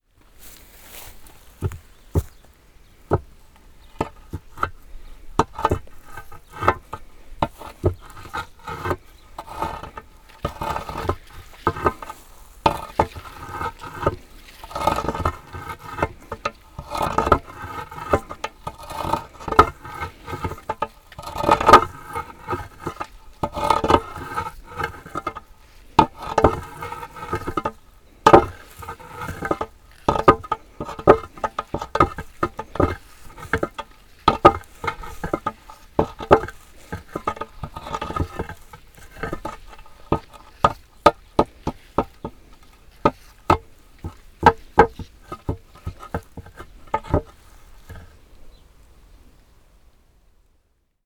walk through the village with binaural microphones, from time to time manipulating objects. recorded together with Ginte Zulyte. Elke wearing in ear microphones, Ginte listening through headphones.
Portugal